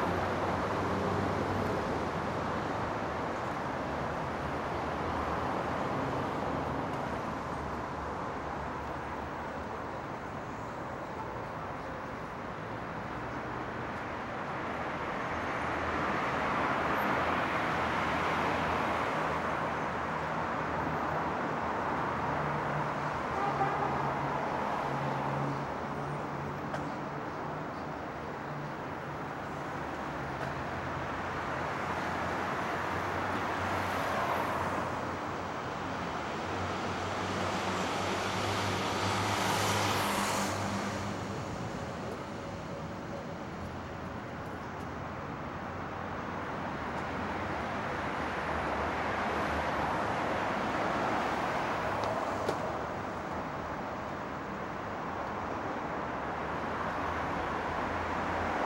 Paulista Avenue, Sao Paulo - São Paulo, Brazil - Av. Paulista
Paisagem Sonora da Avenida Paulista
Soundscape Paulista Avenue.
3 September, 21:30